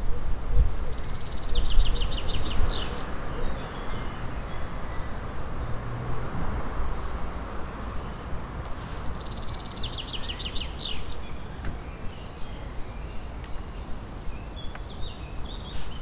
Drzymały, Gorzów Wielkopolski, Polska - Siemiradzkiego park.
Birds in Siemiradzkiego park. The place where recording has been captured used to be the pond with the small waterfall before the second war, now it's a dry part of the park.
województwo lubuskie, Polska